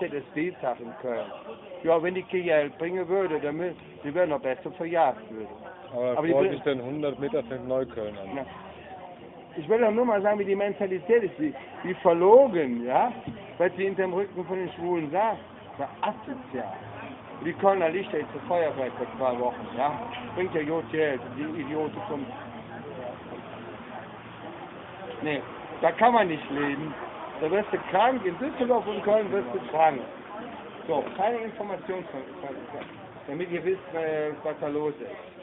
conspiracy theory of a homeless from cologne (mobile phone recording)
the city, the country & me: july 19, 2011
Berlin, Germany, 19 July 2011